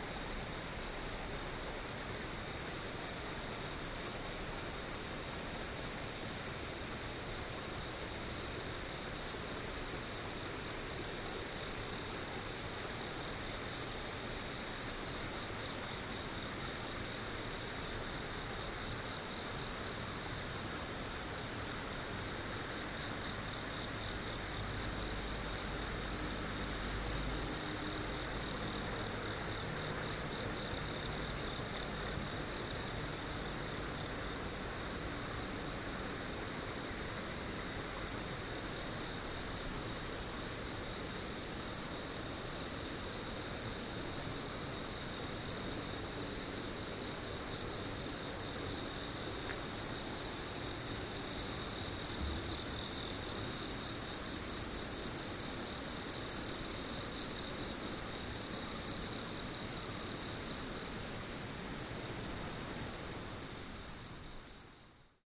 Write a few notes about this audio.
Cicadas, The sound of water, Sony PCM D50